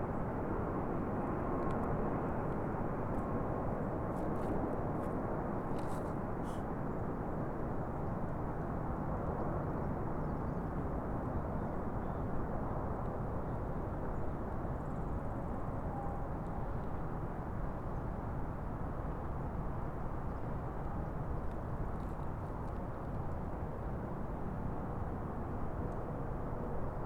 Recorded at the trailhead for the Big Rivers Regional Trail. This spot overlooks the Minnesota River and is under the arrival path for runways 30L and 30R at Minneapolis/St Paul International Airport. Landing planes as well as wildlife and road noise from nearby I-494 can be heard.